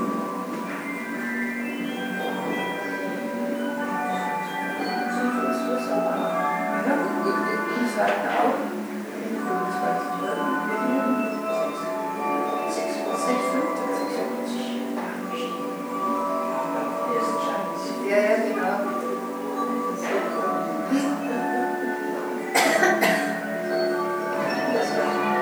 tondatei.de: stadtmuseum lindau mechanische musikinstrumente
museum, musik, unterhaltung
Deutschland, European Union